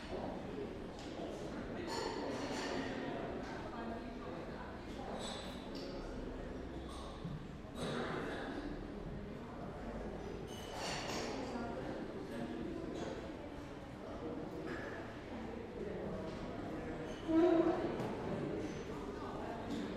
hotel carlton hall dentrée
enregisté sur ares bb le 13 fevrier 2010
Cannes, France